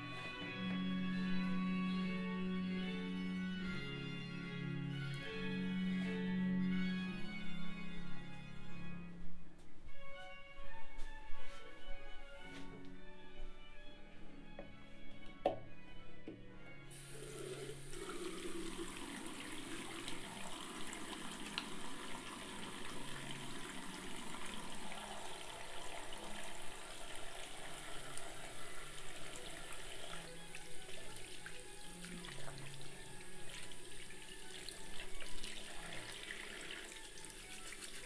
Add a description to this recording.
"Round Noon bells on November 7th, Saturday in the time of COVID19" Soundwalk, Chapter CXXXIX of Ascolto il tuo cuore, città. I listen to your heart, city, Saturday, November 7th, 2020, San Salvario district Turin, walking to Corso Vittorio Emanuele II and back, crossing Piazza Madama Cristina market; first day of new restrictive disposition due to the epidemic of COVID19. Start at 11:50 a.m. end at 00:17 p.m. duration of recording 27’19”, The entire path is associated with a synchronized GPS track recorded in the (kmz, kml, gpx) files downloadable here: